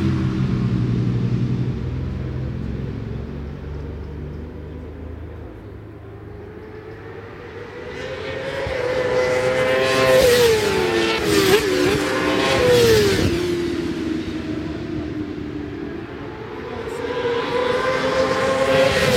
Brands Hatch GP Circuit, West Kingsdown, Longfield, UK - WSB 1998 ... Superbikes ... Qual ...
WSB 1998 ... Superbikes ... Qual (contd) ... one point stereo mic to minidisk ...